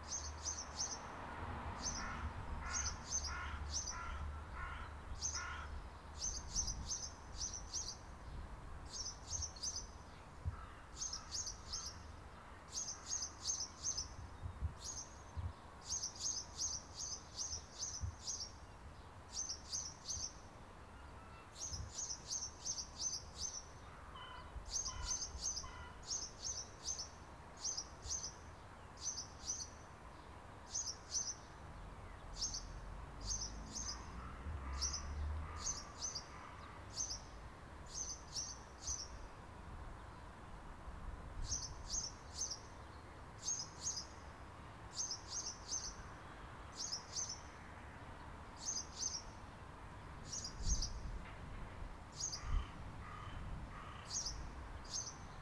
Swaythling, Southampton, UK - 056 Birds, phone signals, traffic